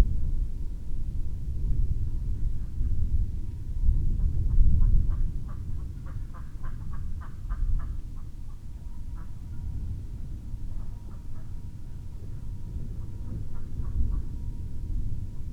Chapel Fields, Helperthorpe, Malton, UK - moving away thunderstorm ...
moving away thunderstorm ... xlr SASS on tripod to Zoom H6 ... dogs ... ducks ... voices in the background ...
26 June 2020, 23:04